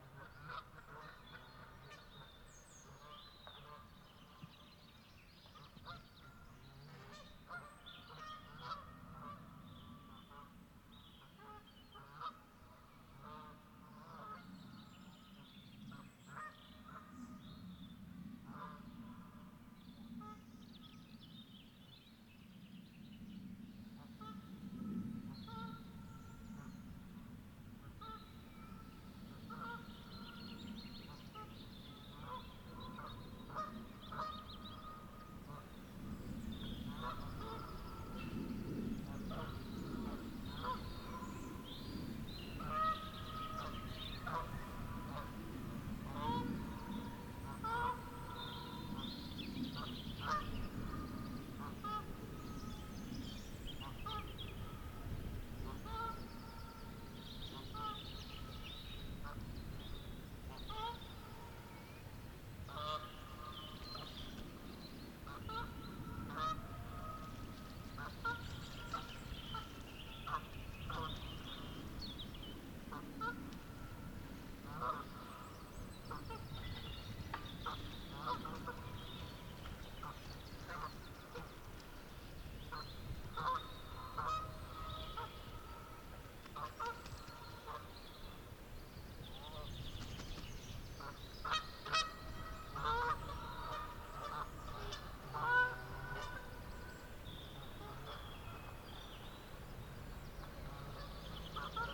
On a wooded headland at the northern end of the reservoir. Sunny spring day.